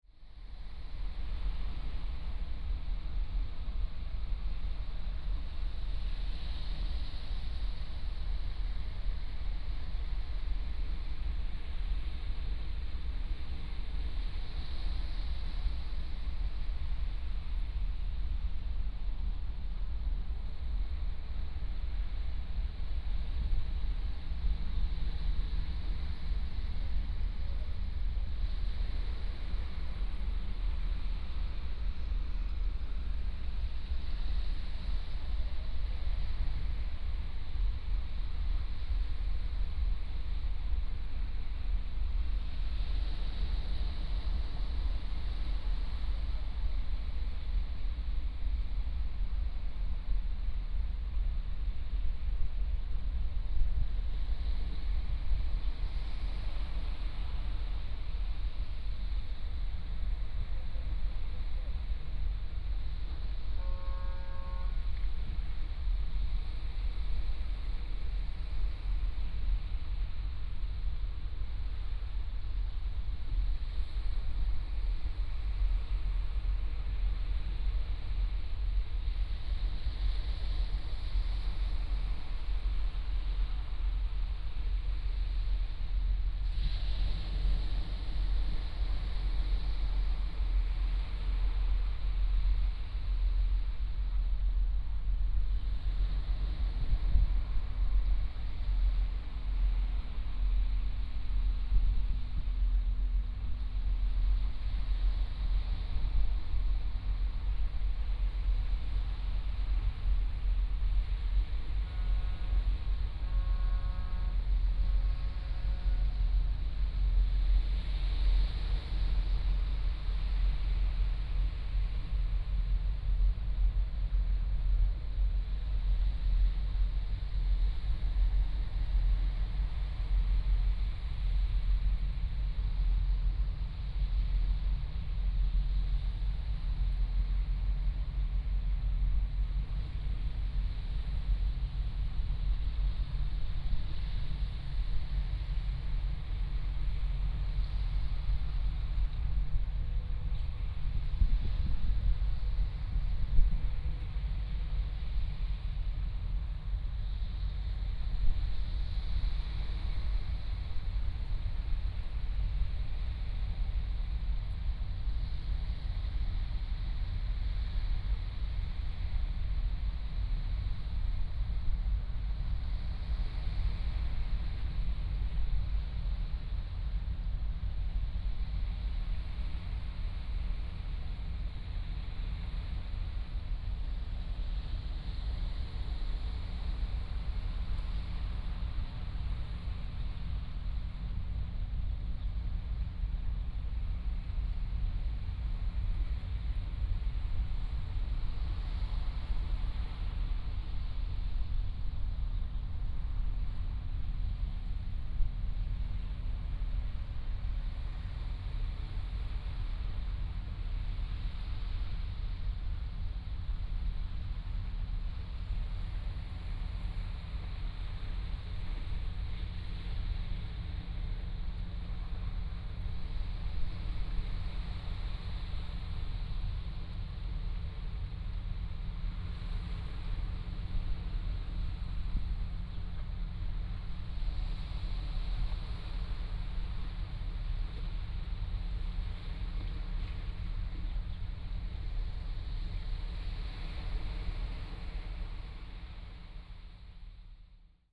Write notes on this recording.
On the coast, Sound of the waves